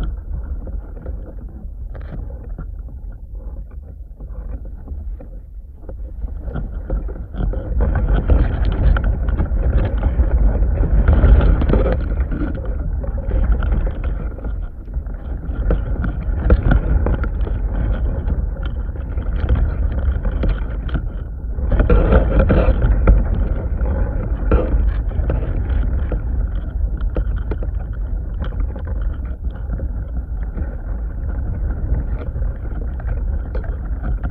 Šlavantai, Lithuania - A pile of cut branches rustling
Dual contact microphone recording of a pile of cut branches, softly brushing against each other. When the wind intensifies, branches rustle louder.
Alytaus apskritis, Lietuva